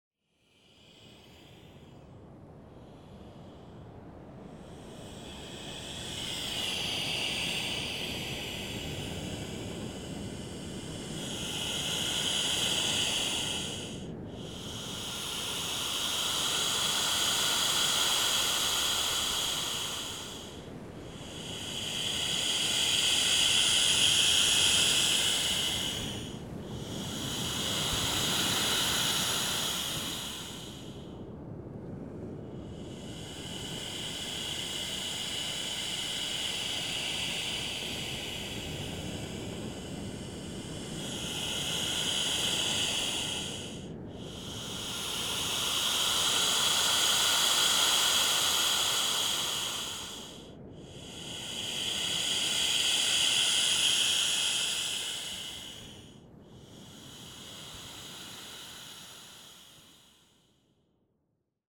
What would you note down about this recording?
Entres les gros rochers de la cote.L'eau s’engouffrant sous les rochers fait siffler une petite cavité dans une crevasse.Mer au lointain. The waves coming through rocks create a weird breathing noise.